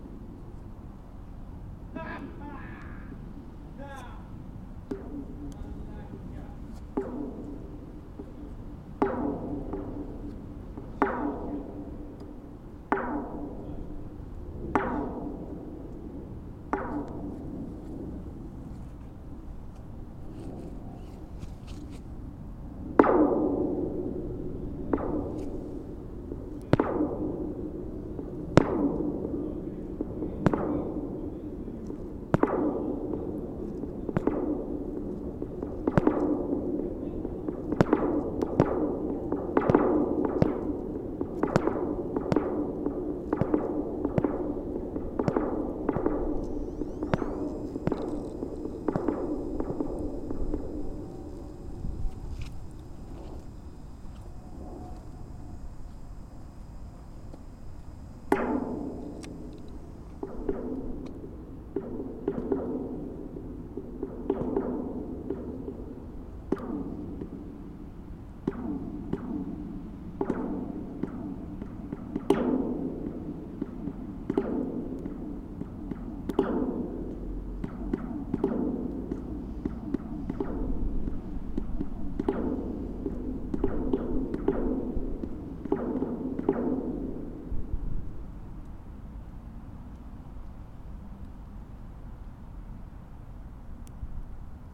Highfield Campus, Southampton, UK - 015 Sculpture
contact mikes on two of four uprights of Justin Knowles' Steel Forms
University Rd, Southampton, UK, 15 January 2017, ~18:00